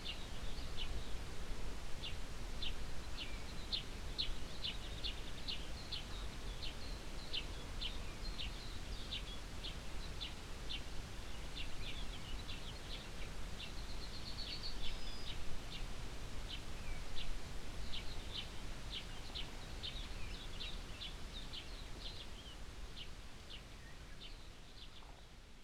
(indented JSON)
{
  "title": "Aukštadvario seniūnija, Litauen - Lithuania, farm house, morning time",
  "date": "2015-07-01 07:00:00",
  "description": "At a farmhouse in the Lithuanian countryside in the morning time. The sounds of bird communication, a mellow morning wind coming uphill from the fields, no cars, no engines\ninternational sound ambiences - topographic field recordings and social ambiences",
  "latitude": "54.63",
  "longitude": "24.65",
  "altitude": "167",
  "timezone": "Europe/Vilnius"
}